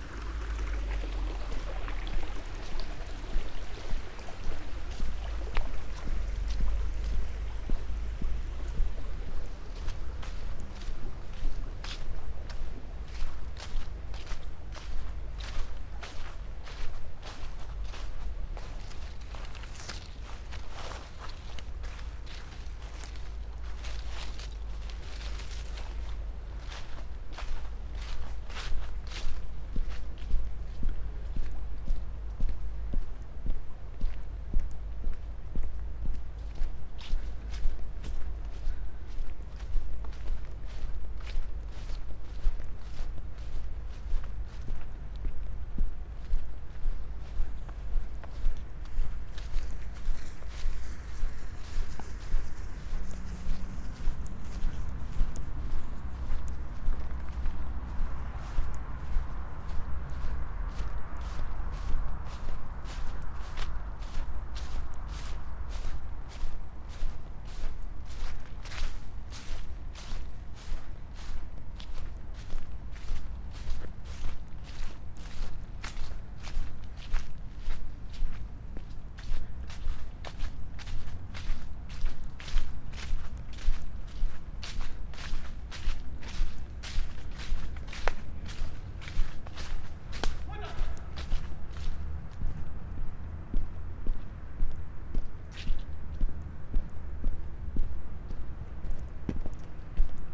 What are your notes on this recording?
round walk in the city park near midnight with full moon rising, variety of fallen leaves, shout, fluid ambiance with rivulets due to intense rainy day - part 2